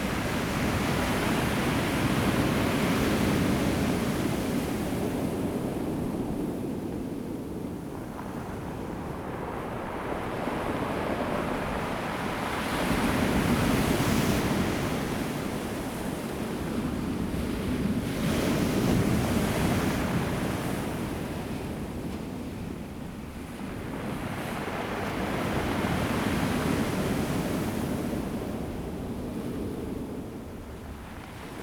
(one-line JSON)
{"title": "達仁鄉南田村, Taitung County - Sound of the waves", "date": "2014-09-05 14:43:00", "description": "Sound of the waves, The weather is very hot\nZoom H2n MS +XY", "latitude": "22.26", "longitude": "120.89", "altitude": "5", "timezone": "Asia/Taipei"}